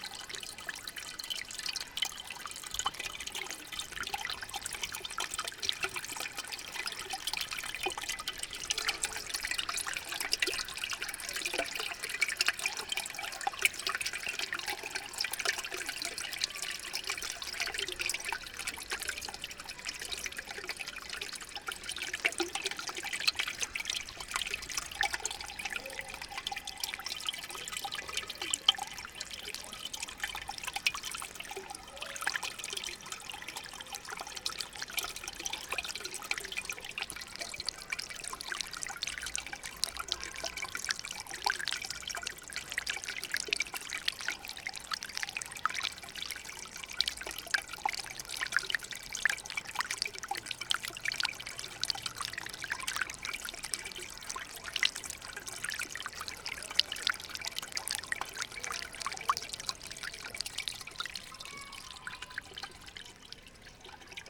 Rue de Lesseps 75020 Paris
Vanne dégout, eau
world listening day
Paris, France